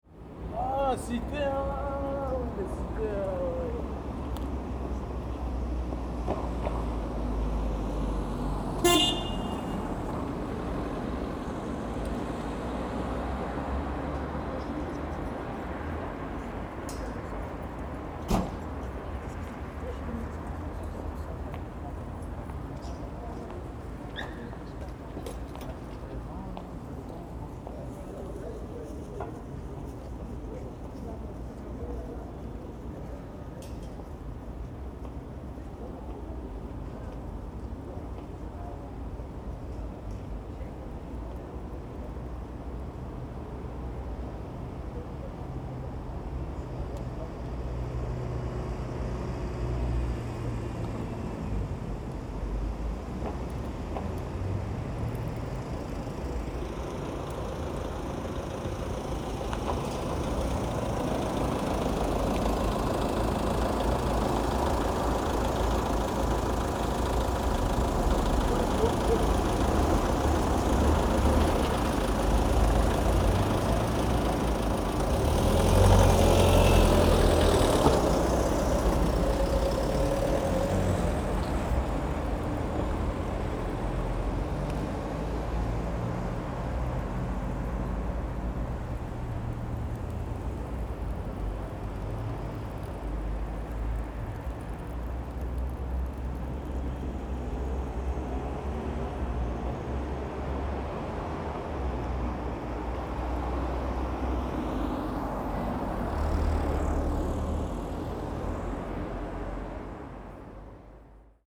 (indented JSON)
{
  "title": "Anderlecht, Belgium - Verheydenstr walk4 Cars at the corner with Sylvain Denayer str",
  "date": "2016-10-15 15:24:00",
  "description": "To this point Verheydenstr has been a one way street. Here traffic can move in both directions. There are more cars and it is a louder spot.",
  "latitude": "50.85",
  "longitude": "4.32",
  "altitude": "39",
  "timezone": "Europe/Brussels"
}